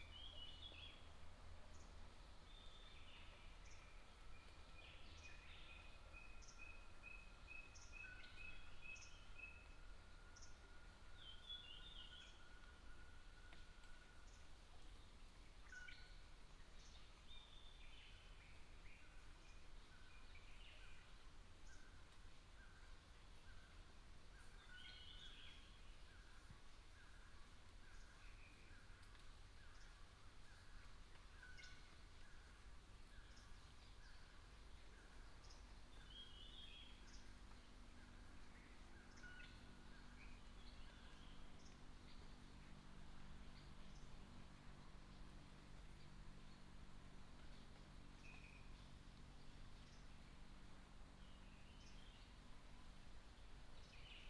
水上巷, TaoMi, Puli Township - Bird calls
Bird sounds, Morning road in the mountains
Nantou County, Puli Township, 水上巷